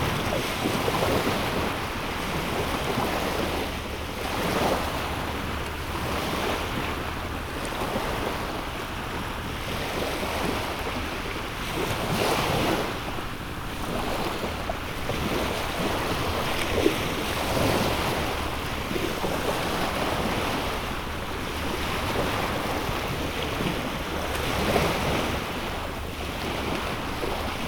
Gently rolling waves on the beach at low tide.